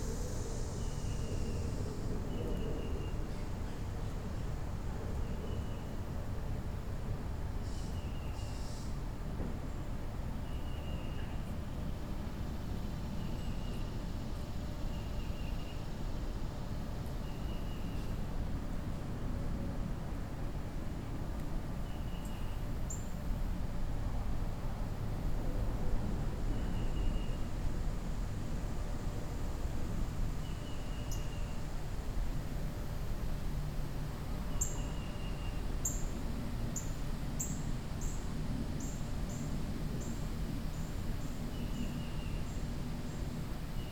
{
  "title": "City Greenway",
  "date": "2010-07-18 11:40:00",
  "description": "11:40am local time on a pedestrian and bicycle greenway inside the city limits, wld, world listening day",
  "latitude": "35.84",
  "longitude": "-78.69",
  "altitude": "69",
  "timezone": "America/New_York"
}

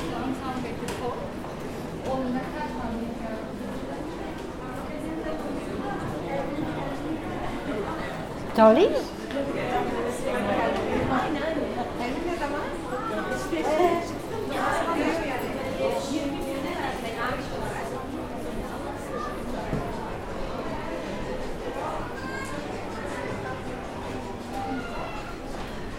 {
  "title": "Laubenspaziergang in der Berner Altstadt",
  "date": "2011-06-10 17:21:00",
  "description": "Lauben, Bern, Altstadt, Arkaden, Flanieren Richtung Zytglockenturm, Dialekt: Zytgloggeturm, Bärn du edle Schwyzer Stärn",
  "latitude": "46.95",
  "longitude": "7.45",
  "altitude": "547",
  "timezone": "Europe/Zurich"
}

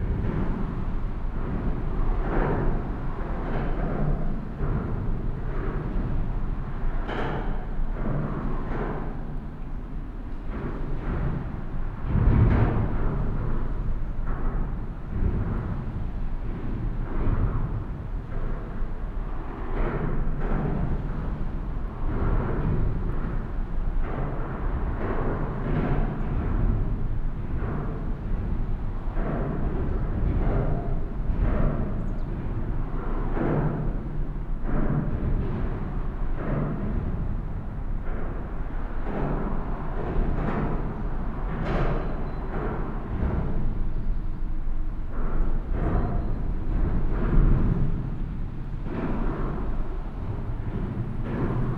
{
  "title": "Bonn, Deutschland - urban drum-machine bonn",
  "date": "2010-07-23 14:10:00",
  "description": "A bicycle and walking path is leading under the Adenauer bridge directly along the rhine. And as is often the case in such architectural situations, these places become walkable drum-machines due to their traffic.",
  "latitude": "50.72",
  "longitude": "7.14",
  "altitude": "50",
  "timezone": "Europe/Berlin"
}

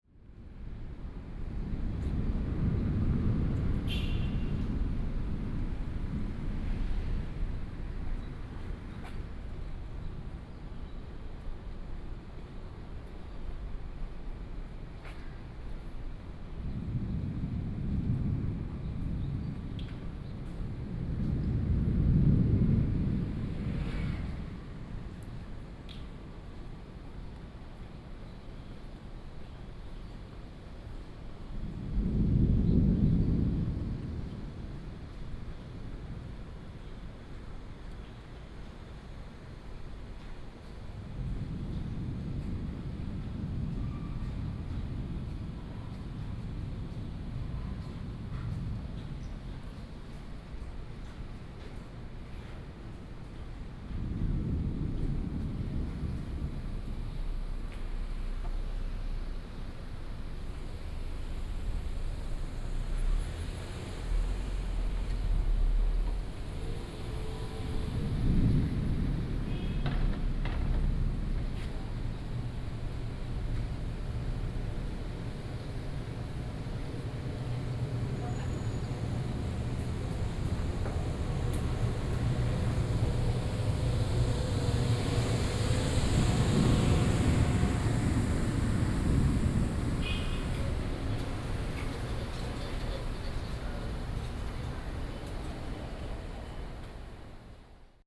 {
  "title": "Ln., Guangfu S. Rd., Xinyi Dist., Taipei City - Walking in a small alley",
  "date": "2015-07-23 13:30:00",
  "description": "Walking in a small alley, Traffic noise, Sound of thunder",
  "latitude": "25.03",
  "longitude": "121.56",
  "altitude": "24",
  "timezone": "Asia/Taipei"
}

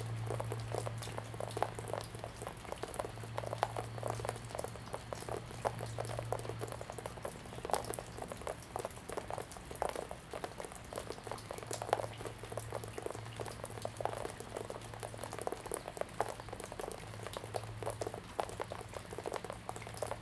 {
  "title": "埔里鎮桃米里水上巷3-3, Taiwan - raindrop",
  "date": "2016-03-24 10:52:00",
  "description": "raindrop\nZoom H2n MS+XY",
  "latitude": "23.94",
  "longitude": "120.92",
  "altitude": "480",
  "timezone": "Asia/Taipei"
}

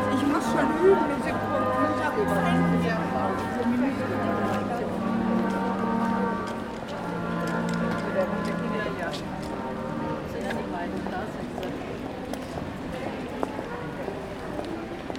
{
  "title": "Essen, Deutschland - Weihnachtsmusik ohne Glockenspiel / Christmas music without carillon",
  "date": "2014-11-26 15:37:00",
  "description": "Leider wurde hier nur Weihnachtsmusik gespielt. Das Glockenspiel war wohl eben beendet. / Unfortunately, only Christmas music was played. The carillon was probably just finished.",
  "latitude": "51.45",
  "longitude": "7.01",
  "altitude": "91",
  "timezone": "Europe/Berlin"
}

